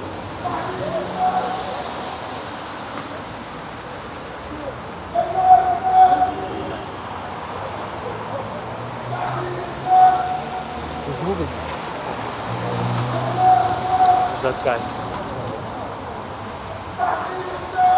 COUCOU LES NAUFRAGES !
Captured by Oscar Inzo